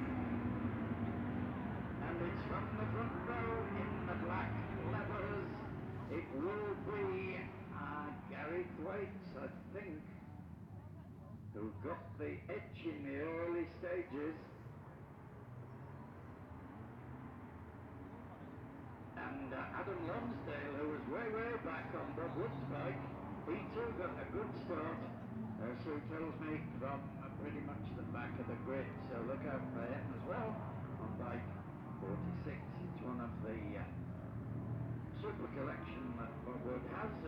Barry Sheene Classic Races ... one point stereo mic to minidisk ... some classic bikes including two Patons and an MV Agusta ...
Scarborough, UK, 23 May 2009